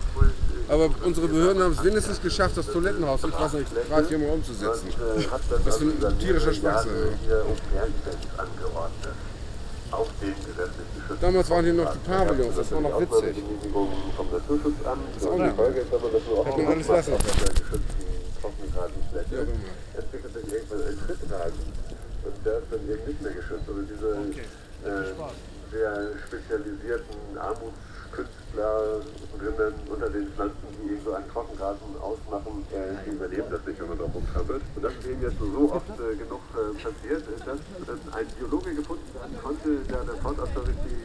Sendung Radio FSK/Aporee in der Großen Bergstraße. Polizei erscheint und erkundigt sich. Teil 1. - 1.11.2009. 16h
Hamburg, Germany, 1 November